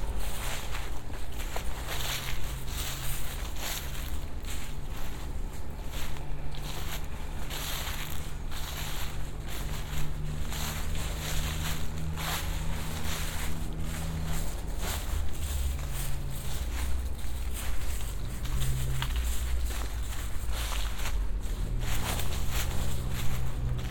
{"title": "Cuenca, Cuenca, España - Soundwalking Cuenca: 2015-11-19 Soundwalk along the banks of the Júcar River, Cuenca, Spain", "date": "2015-11-19 13:20:00", "description": "A soundwalk along the banks of the Júcar River, Cuenca, Spain.\nLuhd binaural microphones -> Sony PCM-D100.", "latitude": "40.08", "longitude": "-2.14", "altitude": "915", "timezone": "Europe/Madrid"}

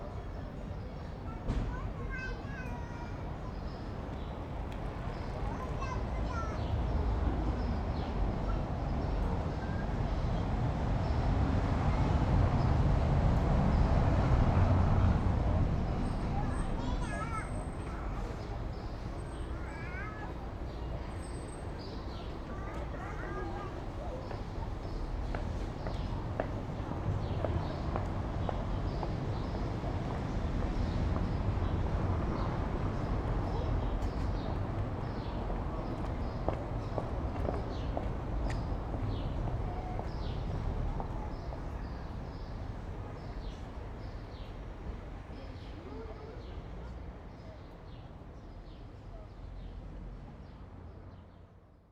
{"title": "berlin: friedelstraße - the city, the country & me: street ambience", "date": "2010-06-03 19:01:00", "description": "street ambience, late afternoon\nthe city, the country & me: june 3, 2010", "latitude": "52.49", "longitude": "13.43", "altitude": "46", "timezone": "Europe/Berlin"}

